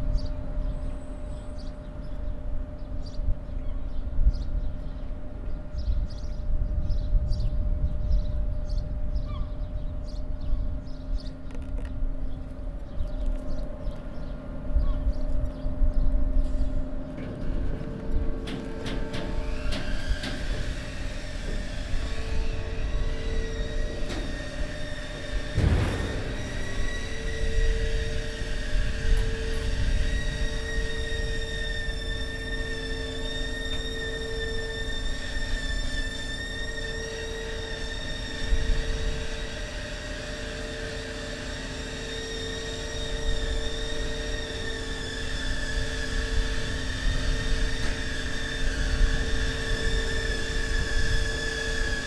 Saint-Nazaire, France - Pont tournant
Pont tournant, entrée du bassin, dans le port de Saint-Nazaire.